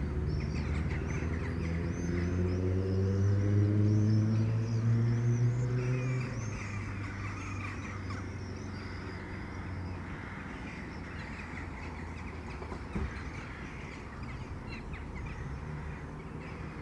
Halesworth market town; sounds of summer through the attic skylight - Next day evening, flocking rooks, swifts/a dog/chimes together
England, United Kingdom, 19 July, ~21:00